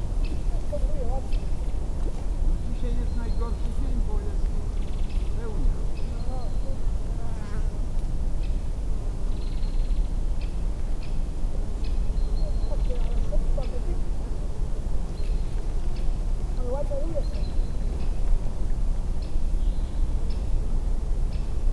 ambience at an artificial pond near Warta river. mostly birds and insects. occasionally a fish jumps out of the water. some timid frog croaks. two fisherman talking briefly. (roland r-07)